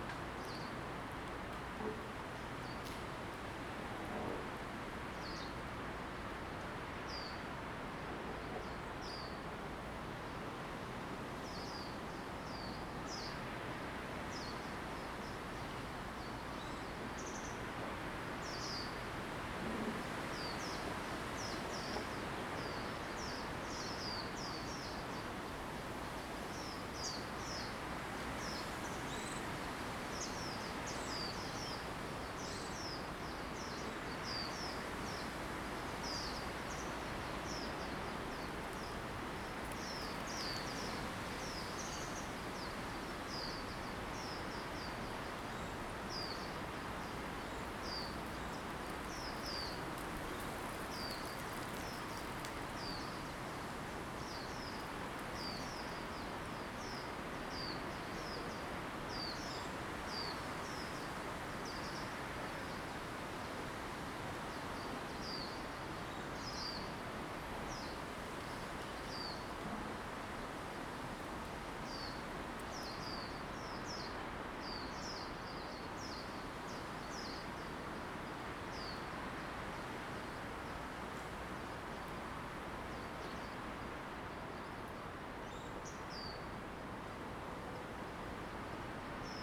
古寧頭戰史館, Jinning Township - In the woods
Birds singing, Wind, In the woods
Zoom H2n MS+XY